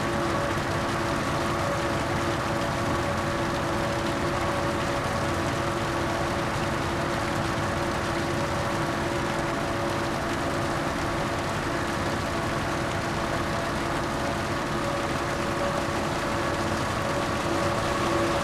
Mergenthalerring, Berlin, Deutschland - A100 - bauabschnitt 16 / federal motorway 100 - construction section 16: agitator and pump
cleaning the drum of a concrete mixer truck, pump separates concrete and water, water runs into the basin, agitator starts agitating
january 2014
Deutschland, European Union, 22 January 2014, 16:30